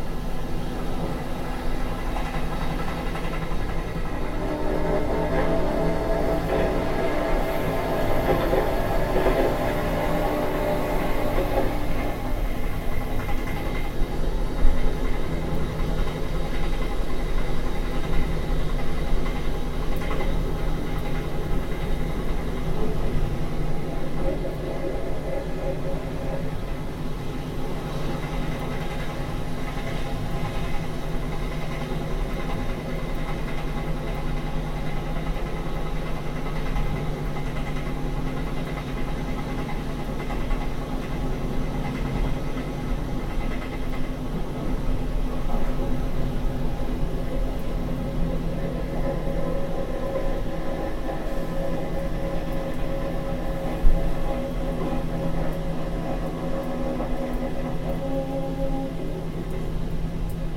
on the train munich to zurich, near memmingen
recorded june 7, 2008. - project: "hasenbrot - a private sound diary"